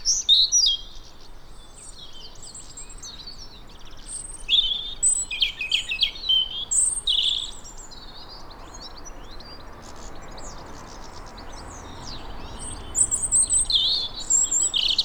Plymouth, UK - Robin and pigeons
25 January 2014